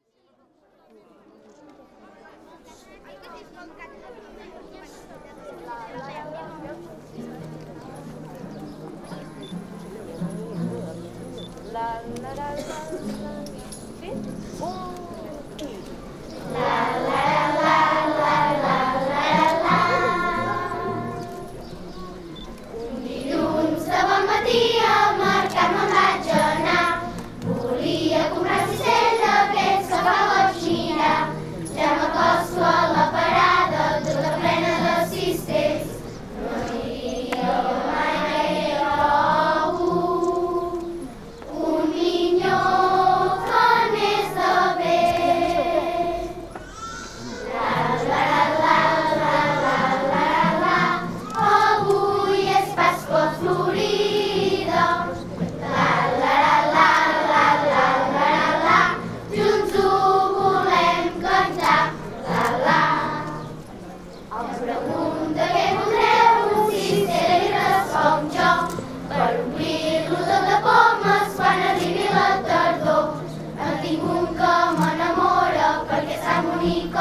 Manlleu, España - Caramelles
Les caramelles són unes cançons i balls populars que es canten per Pasqua o Pasqua Florida. Les caramelles tenien, tradicionalment, temàtica religiosa: cantaven la joia de la resurrecció de Crist, però en els últims temps han incorporat cançons de to festiu i, també, d'aire satíric sobre qüestions locals. Flix, La Seu d'Urgell, Sant Julià de Vilatorta, Súria, Callús, Mataró i Cardona, són les poblacions amb més tradició. A Sant Julià de Vilatorta els caramellaires canten els Goigs del Roser, i porten una vestimenta de gala pròpia de l'antiga ruralia catalana: barret de copalta, capa negra amb valona sobreposada, bordó, i llaç català de color morat. A Súria s'hi celebra l'aplec de Caramelles més nombrós, que reuneix vuit colles i mig miler de cantaires.
17 April, 11:28